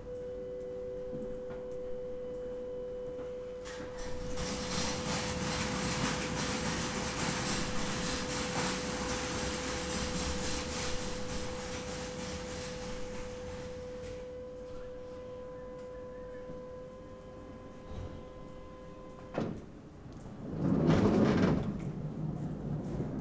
Scarborough, UK - taking the delivery in ...
Taking the delivery in ... recorded with open lavalier mics on mini tripod ...